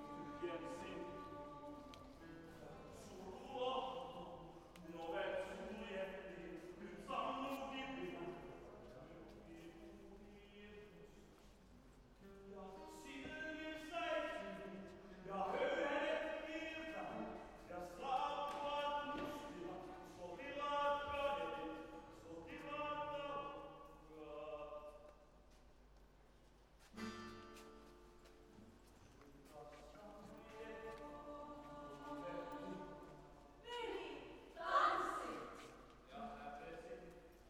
Helsinki, Finland - Temppeliaukio (Rock) Church